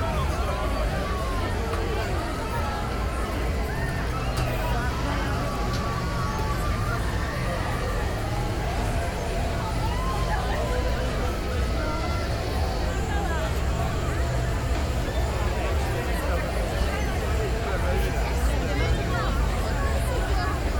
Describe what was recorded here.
Festive event at Brno reservoir. Fireworks (shortened, provided by the Theatrum Pyroboli) and walk (with people) through an amusement park (by the reservoir). Binaural recording, listen through decent headphones. Soundman OKM Studio II microphones, Soundman A3 preamp. HRTF corrected, dynamic is lowered using multiband tool.